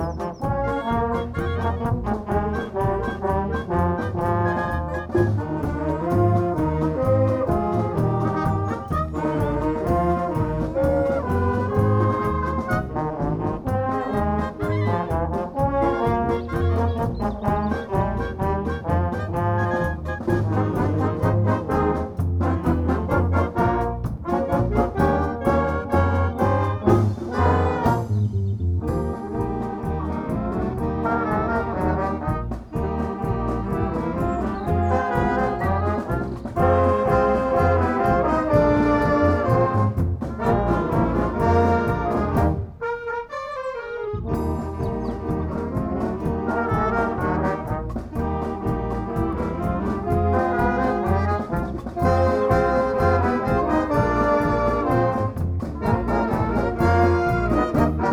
Wilhelm-Kuhr-Straße, Berlin, Germany - Freier Bläser Chor Berlin: the brass bands easter concert
The Freier Bläser Chor Berlin has been in existence since 1926 - the oldest brass band in Berlin. This 2pm concert, in the Bürgerpark Rosengarten Pavilion, took place in beautiful, warm, sunny spring weather.
20 April 2019